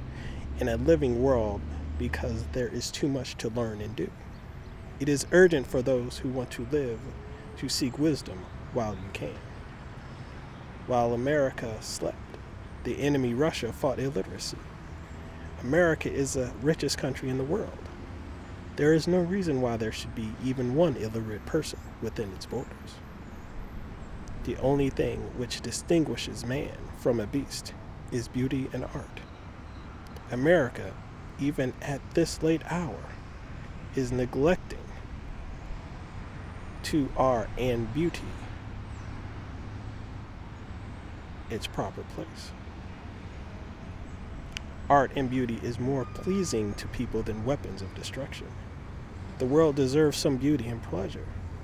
Washington Park, S Dr Martin Luther King Jr Dr, Chicago, IL, USA - What America Should Consider
8 September 2012